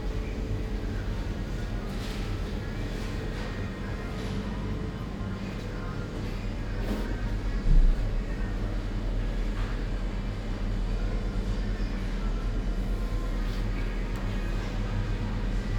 Ascolto il tuo cuore, città, I listen to your heart, city, Chapter LXIV - Shopping Saturday afternoon in the time of COVID19 Soundwalk
"Shopping on Saturday afternoon in the time of COVID19" Soundwalk
Chapter LXIV of Ascolto il tuo cuore, città, I listen to your heart, city
Saturday May 2nd 2020. Shopping in district of San Salvario, Turin, fifty three days after emergency disposition due to the epidemic of COVID19.
Start at 5:19 p.m., end at h. 6:03 p.m. duration of recording 44’20”
The entire path is associated with a synchronized GPS track recorded in the (kml, gpx, kmz) files downloadable here:
Piemonte, Italia, May 2020